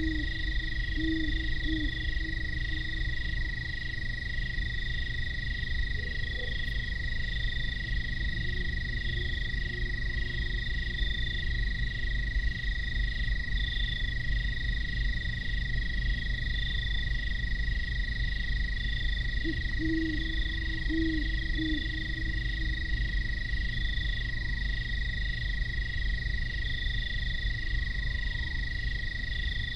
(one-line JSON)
{"title": "Commercial Township, NJ, USA - insects and owls", "date": "2016-10-18 02:30:00", "description": "Mild temperatures revitalize insect chatter as midnight great horned owls signify territories. An industrial sand plant drones discreetly in the distance.", "latitude": "39.33", "longitude": "-75.07", "altitude": "15", "timezone": "America/New_York"}